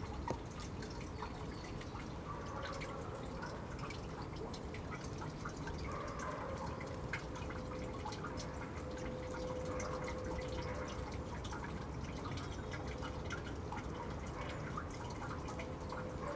Contención Island Day 32 outer east - Walking to the sounds of Contención Island Day 32 Friday February 5th
The Drive Moor Crescent Moorfield Jesmond Dene Road Friday Fields Lane Towers Avenue Bemersyde Drive Deepwood
Drizzle
blown on the east wind
Rain gathers
runs and drops
into a grating
a dog barks
England, United Kingdom